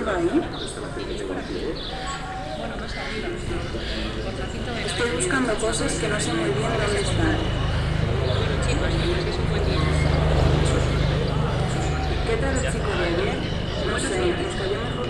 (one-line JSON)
{"title": "Plaza de la Corrala, Lavapiés, Madrid - «Centro cívico»: a soundwalk through a public sound installation", "date": "2014-05-24 15:30:00", "description": "«Centro cívico» was a public sound installation piece produced as a result of a workshop by Brandon Labelle at La Casa Encendida, Madrid, 21-24/06/2014.\nThis recording presents a soundwalk through the finished installation.", "latitude": "40.41", "longitude": "-3.70", "altitude": "641", "timezone": "Europe/Madrid"}